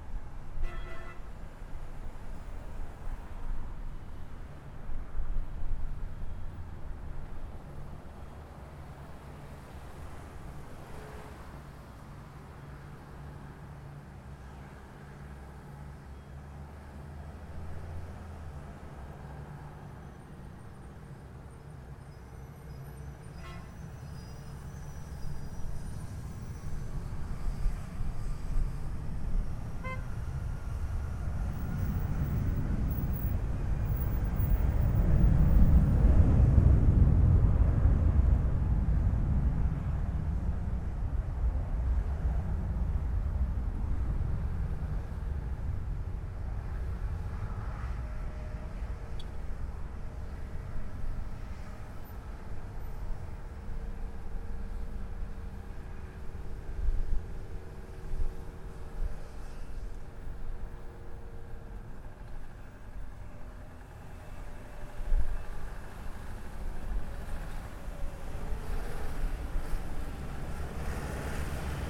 Microphone pointed at planes revving engines on tarmac
East Elmhurst, NY, USA, 3 March 2017, 11:30